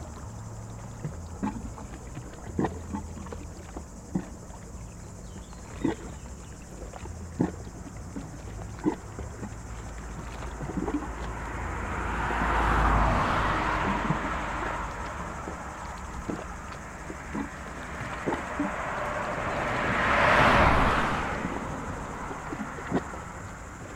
Entrelacs, France - côté lac
Glouglous dans des cavités de la berge en rochers et ciment. Véhicules de passage sur la RD991, avion. Bateaux sur le lac.
France métropolitaine, France, 22 July 2022, 12:35